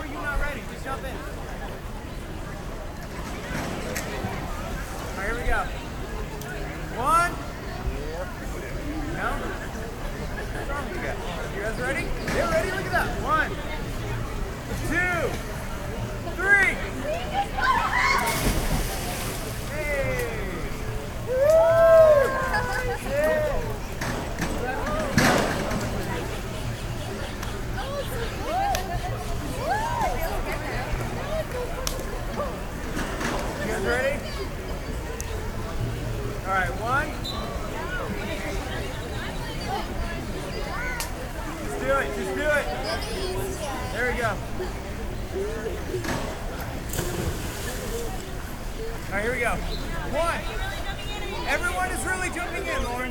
Fun at Barton Springs, Austin, Texas - Fun at Barton Springs
Fun with friends at Barton Springs in Zilker Park. Carefree people, swimming, diving board, distant drumming, laughter, families, splashing water.
Church Audio CA-14 omnis with binaural headset > Tascam DR100 MK-2